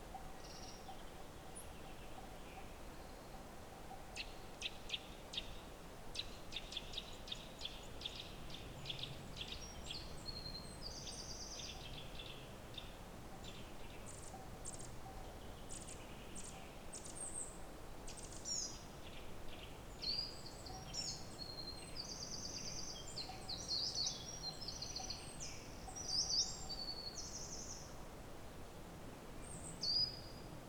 In 2022 we have a severe drought. So no rain no water. Birds, stream in the distance and cow bell.
Lom Uši Pro, MixPre II